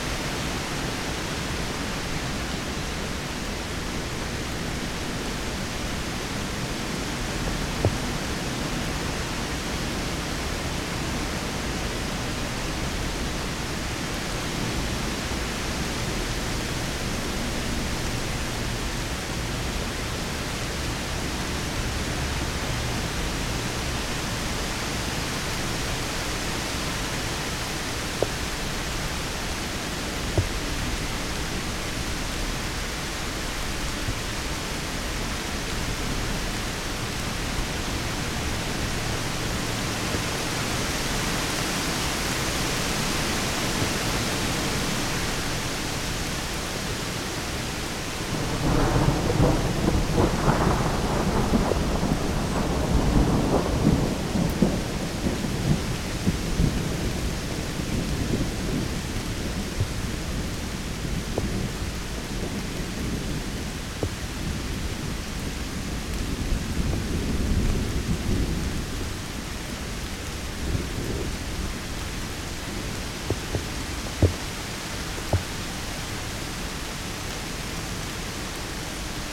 Domaine du Buttet, Le Bourget-du-Lac, France - Sous l'averse
A l'abri sous les feuillages, grosse averse, quelques coups de tonnerre.